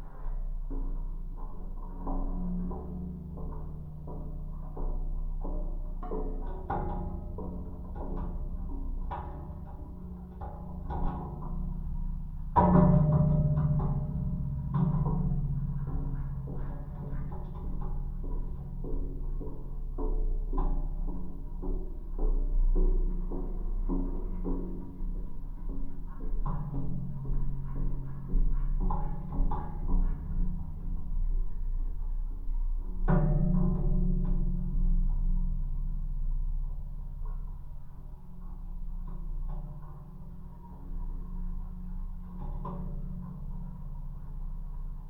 Utena, Lithuania, handrail
LOM geophone on the handrails of passengers' bridge. an old woman is feedings birds. some crows walks on the handrails